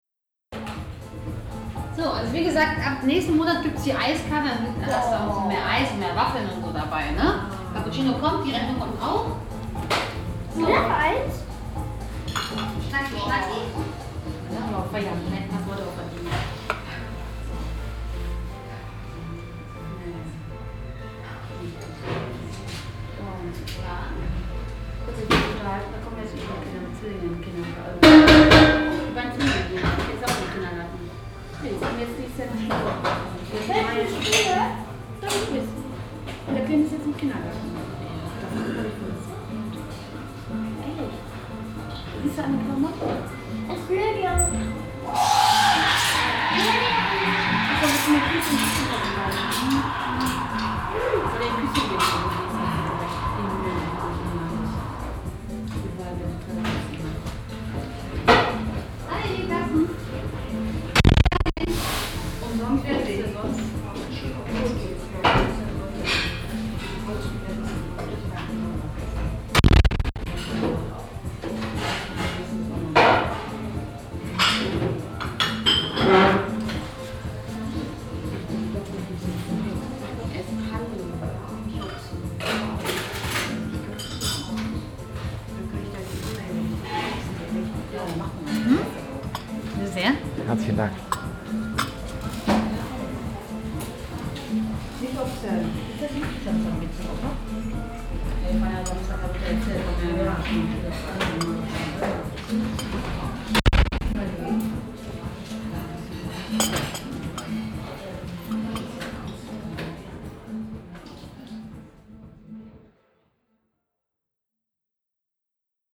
Borbeck - Mitte, Essen, Deutschland - essen, borbeck, cafe
Im Cafe Augenblick. Die Stimme der Bedienung, Musik, Gäste unterhalten sich, die Kaffeemaschine.
At the Cafe Augenblick. The voice of the waitress, music, guests talking, the coffee machine.
Projekt - Stadtklang//: Hörorte - topographic field recordings and social ambiences
Essen, Germany, 14 May 2014, 12:30